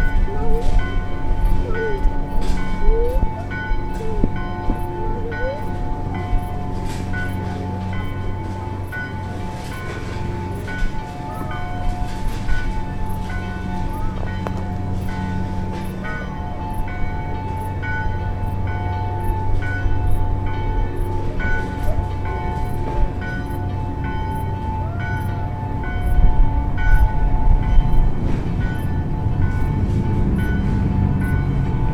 bells from Saint Vitus and Strahov Monestary